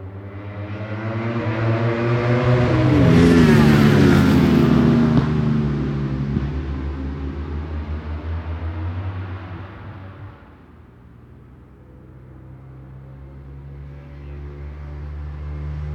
Brands Hatch GP Circuit, West Kingsdown, Longfield, UK - WSB 2004 ... superbike qualifying ...
world superbikes 2004 ... superbike qualifying ... one point stereo mic to mini disk ...